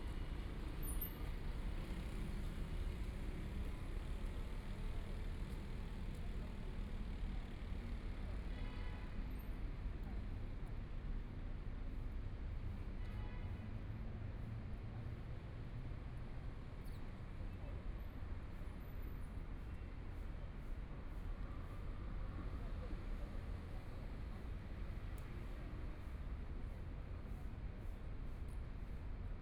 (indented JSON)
{
  "title": "中山區, Taipei City - Sitting in front of the temple",
  "date": "2014-01-20 17:32:00",
  "description": "Sitting in front of the temple, Traffic Sound, Motorcycle sound, Binaural recordings, Zoom H4n+ Soundman OKM II",
  "latitude": "25.06",
  "longitude": "121.52",
  "timezone": "Asia/Taipei"
}